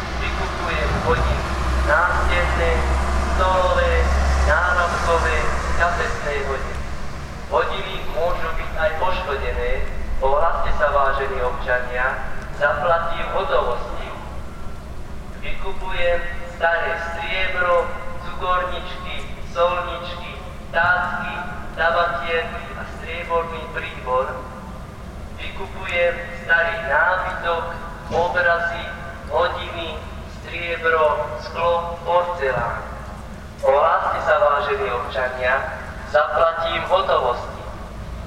Bratislava - Kramáre - the art buyer
In our neighborhood there used to be guy driving aroound the neighborhood and announcing to buy old pieces of art and furniture